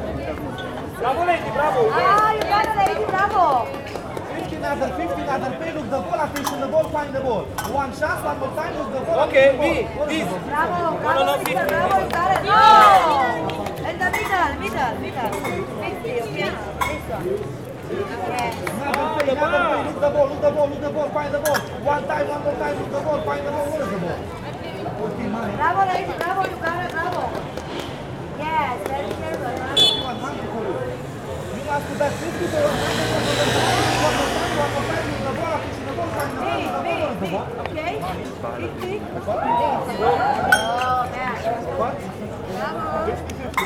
Montmartre, Paris, France - The Shell Game, Paris
Sounds from the "shell game" near Sacre Coeur.
Zoom H4n
1 August 2016, 18:30